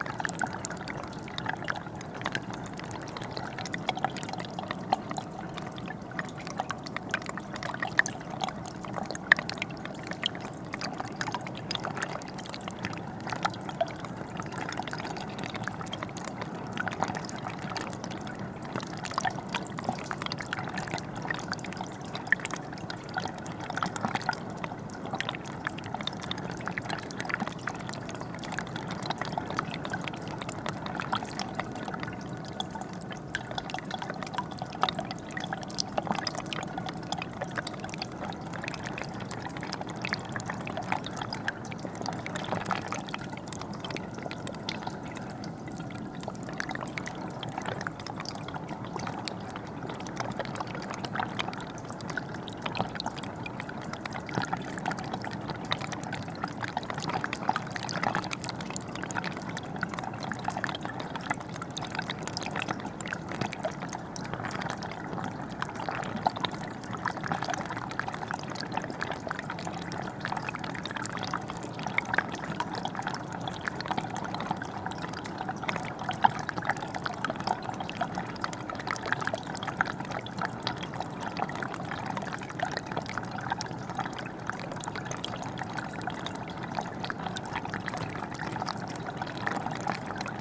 Differdange, Luxembourg - Hole in a wall
A small hole in a stone wall is spitting water. This makes a strange noise. I plugged the hole with rotten wood. Water is finding a new way inside the stone.
Hussigny-Godbrange, France, 28 March